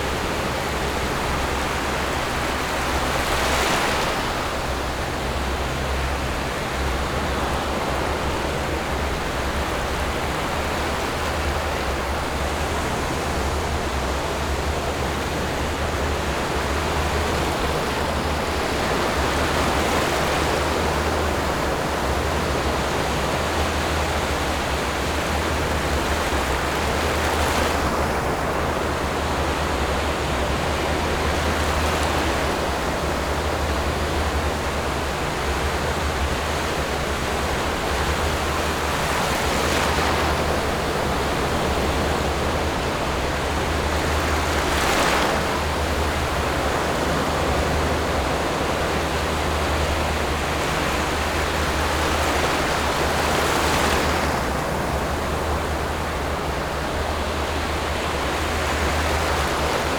頭城鎮外澳里, Yilan County - In the beach
Hot weather, In the beach, Sound of the waves, There are boats on the distant sea
Zoom H6 MS+ Rode NT4